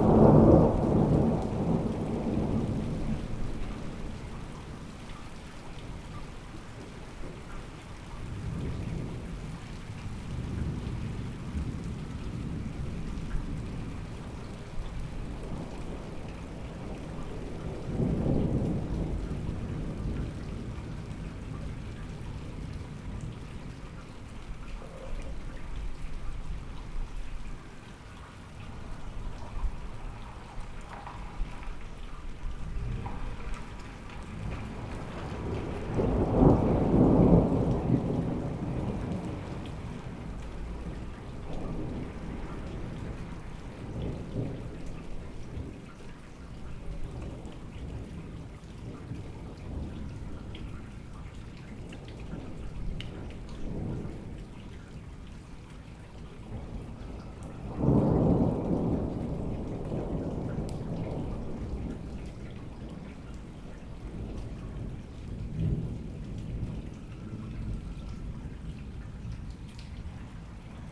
Rue de Vars, Chindrieux, France - Orage chaotique
Orage très irrégulier avec pluie imprévisible, les coups de tonnerre se déroulent sans grondements prolongés, captation depuis une fenêtre du premier étage.
30 June 2022, ~18:00